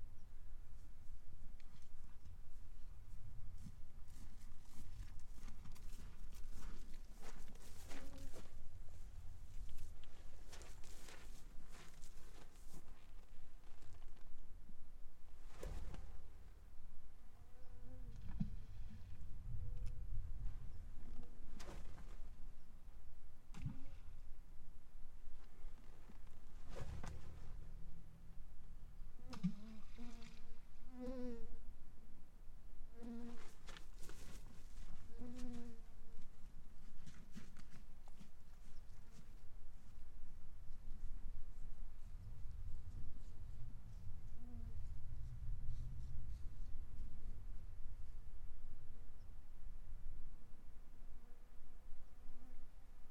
Teriberka, Murmansk district, Sekretarskoe Lake, Russia - Reflections of Rocks Water Voice
Dead quiet place at the Sekretarskoe Lake near the Barents Sea. Insects attack. Unusual acoustic reflections in unusualy silent place.
Recorded with Tereza Mic System - Zoom F6
Мурманская область, Северо-Западный федеральный округ, Россия